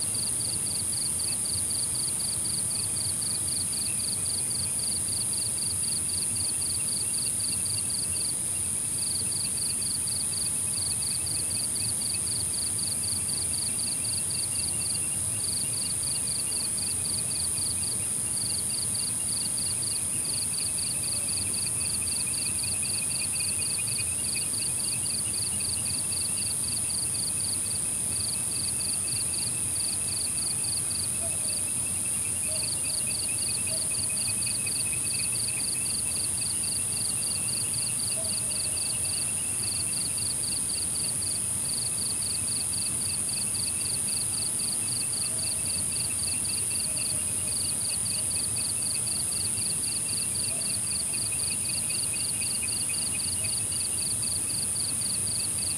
Sound of insects, Frogs chirping, Dog chirping
Zoom H2n MS+XY
Puli Township, 桃米巷11-3號, 10 August